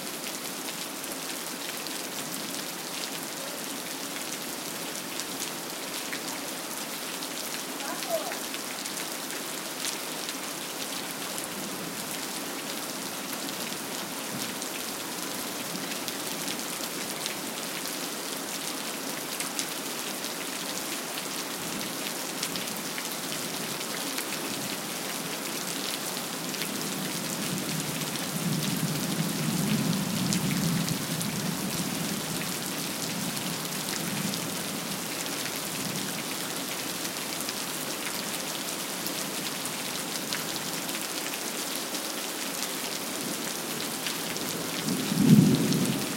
SQN, Brasília, DF, Brasil - Rain and Thunders
Rain and thunders in the nightfall in Brasília, Brazil.